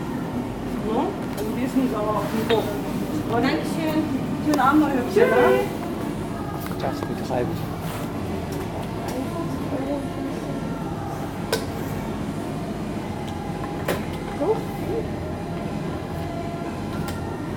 weißwasser, bautzener str, night filling station
night time at a local 24 hour open filling station. the sound of the d´gas automat, steps and inside the station. In the background the local youth hanging around.
soundmap d - social ambiences and topographic field recordings